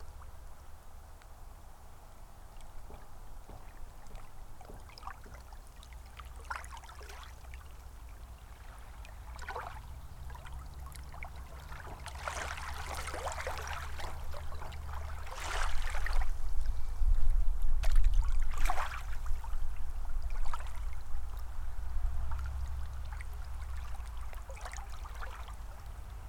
East Bay Park, Traverse City, MI, USA - Freezing Ripples in February
Thursday afternoon on a winter's day. Minor water movement heard, near shore, with most of bay otherwise frozen. Airplane headed to/from nearby airport heard. Stereo mic (Audio-Technica, AT-822), recorded via Sony MD (MZ-NF810, pre-amp) and Tascam DR-60DmkII.
4 February 2016, 14:55